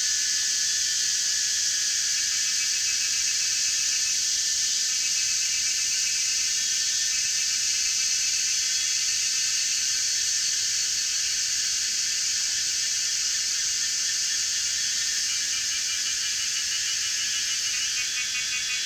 水上, 桃米里 Nantou County - In the woods
Cicadas cry, In the woods
Zoom H2n MS+XY